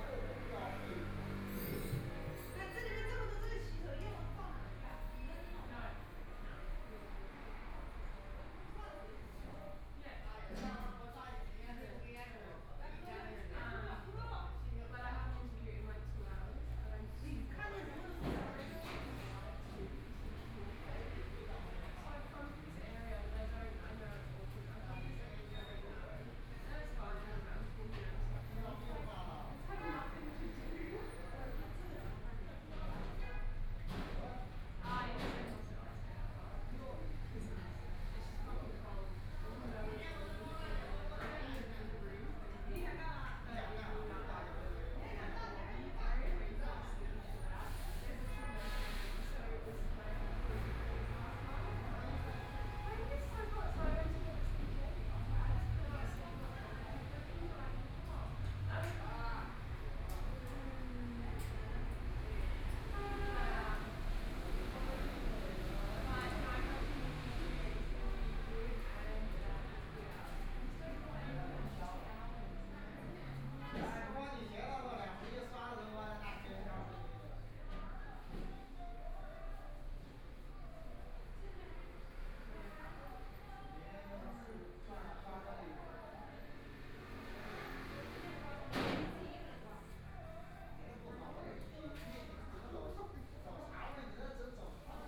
中華人民共和國上海黃浦區 - In the restaurant
In the restaurant, Binaural recording, Zoom H6+ Soundman OKM II
Shanghai, China, 29 November 2013